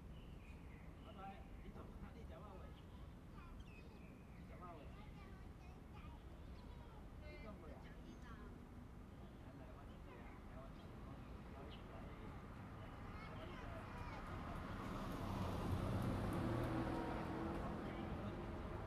Xiping, Yuanli Township 苑裡鎮 - Next to the railway
Traffic sound, The train runs through, bird sound
Zoom H2n MS+XY +Spatial audio